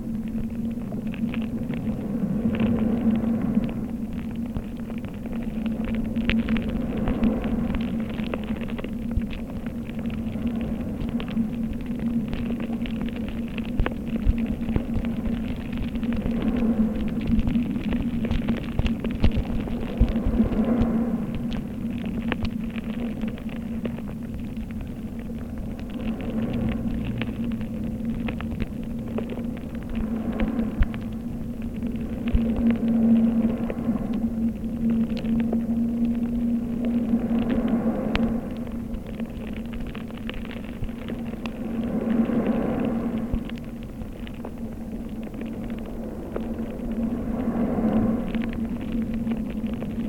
Galatas, Crete, hydrophone in the sand
hydrophone half buried in the sand at the sea